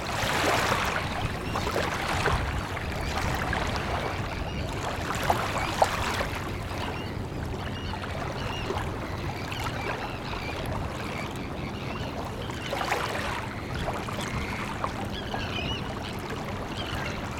Unnamed Road, Gdańsk, Poland - Mewia Łacha 2
Mewia Łacha 2